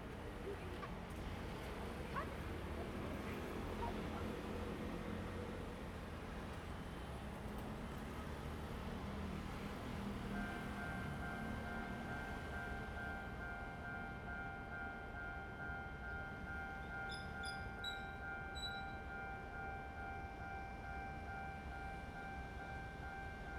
Railroad Crossing, Traffic sound, The train runs through
Zoom H2n MS+XY